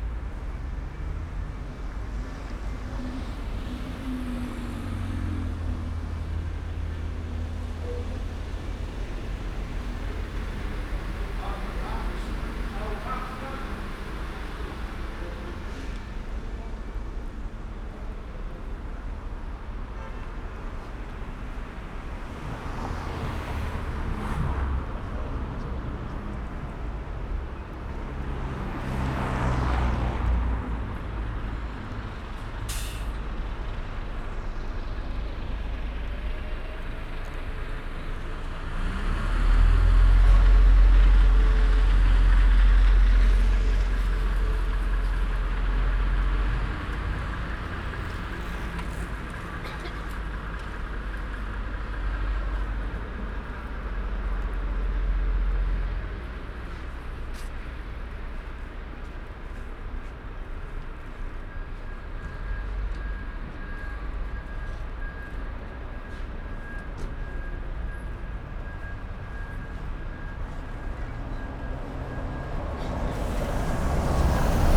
Binckhorst, La Haya, Países Bajos - soundwalk along Melkwegstraat
recorded with zoom H4, and two DPA microphones
Zuid-Holland, Nederland, European Union